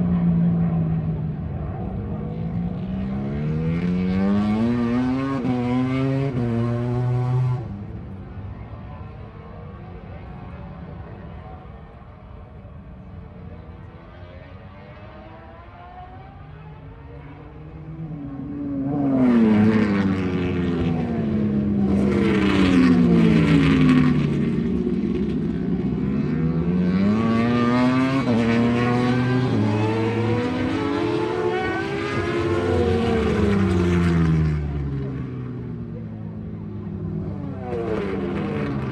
Donington Park Circuit, Derby, United Kingdom - British Motorcycle Grand Prix 2004 ... free practice ...
British Motorcycle Grand Prix 2004 ... free practice ... part one ... one point stereo mic to minidisk ...
24 July 2004